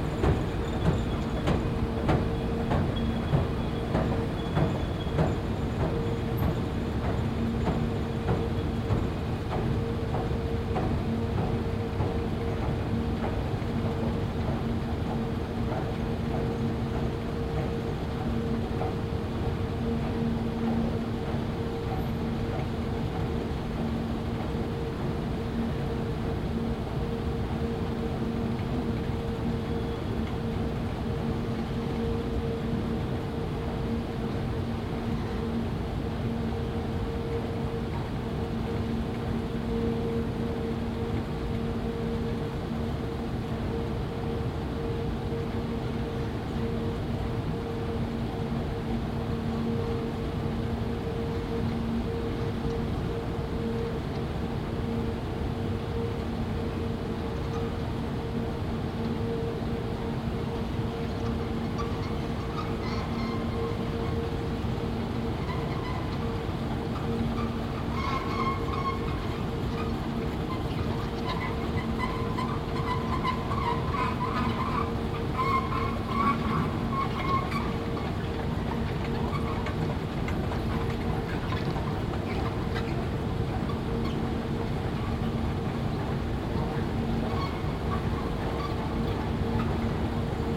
Steenokkerzeel, Belgien - Anthrophonies: Brussels Airport
On a fact-finding mission to Ghana in February, i made an overnight transit at Brussels International Airport and documented Anthrophony of the space.
Please listen with headphones for subtle details in the sound. Thank you.
Date: 15.02.2022.
Recording format: Binaural.
Recording gear: Soundman OKM II into ZOOM F4.
Vlaams-Brabant, Vlaanderen, België / Belgique / Belgien